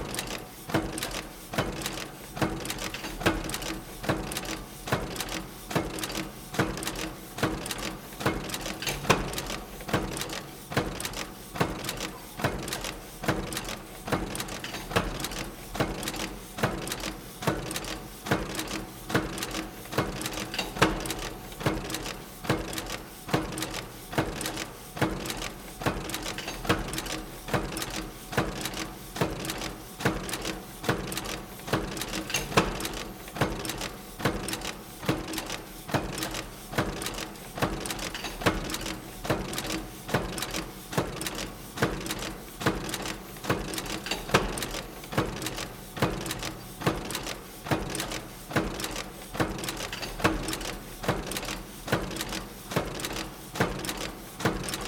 monheim, frohnstr, kettenherstellung - monheim, frohnstr, kettenmaschine 3
werkhalle - kettenherstellung - maschine 03
aufnahme mit direktmikrophonie stereo
soundmap nrw - social ambiences - sound in public spaces - in & outdoor nearfield recordings